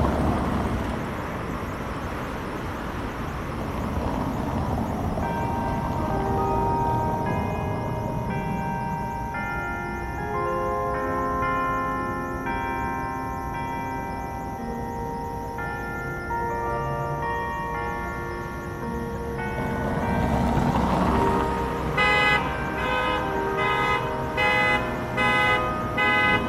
Muhlenberg College Hillel, West Chew Street, Allentown, PA, USA - Chew Street
In this recording you can hear the characteristic cobble stone on the road as cars drive over it, as well as the Muhlenberg college song in conjunction with traffic and a car alarm.